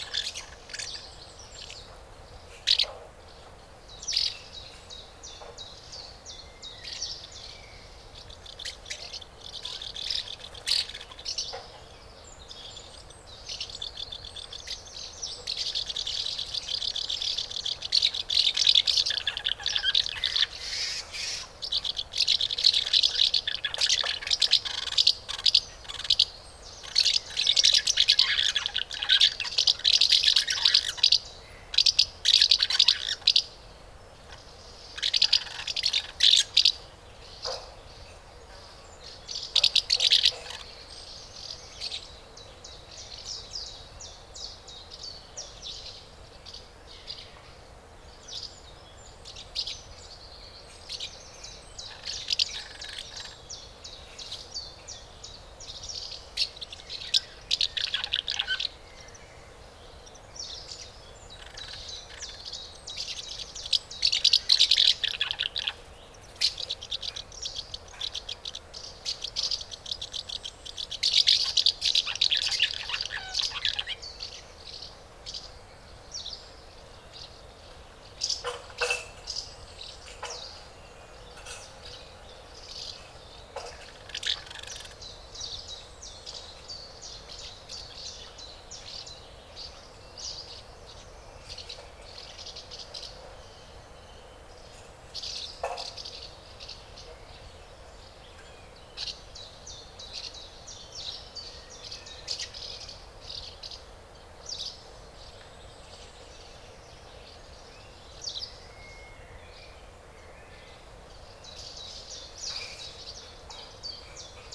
Im Dornbuschwald, Insel Hiddensee, Deutschland - Swallows nest
Swallow's nest, the adult birds feed the nestlings and other swallows fly curiously around the nest
Vorpommern-Rügen, Mecklenburg-Vorpommern, Deutschland, 22 May 2019